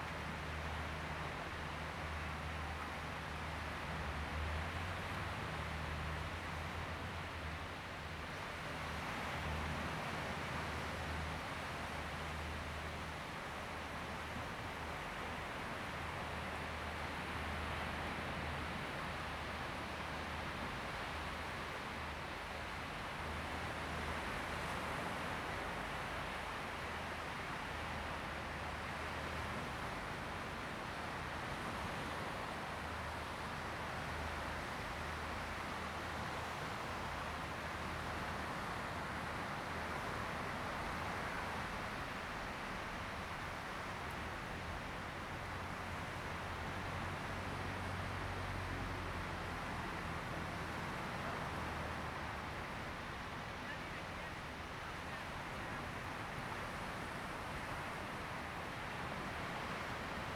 Shihlang Diving Area, Lüdao Township - Diving Area
On the coast, Sound of the waves, A boat on the sea
Zoom H2n MS+XY